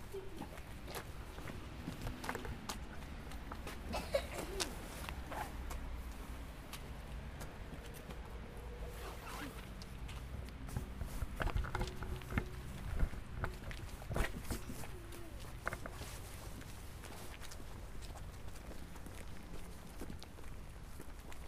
Ecole élémentaire Pérey, Cronenbourg Ouest, Strasbourg, France - Schoolyard with buses passing
Schoolyard with some buses passing around in the afternoon
8 November 2016, 16:27